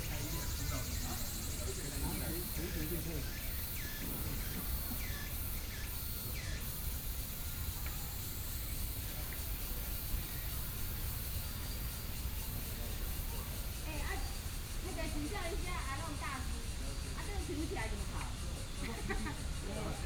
Taoyuan - Grill
Morning, a group of people are barbecue in the park, Sony PCM D50 + Soundman OKM II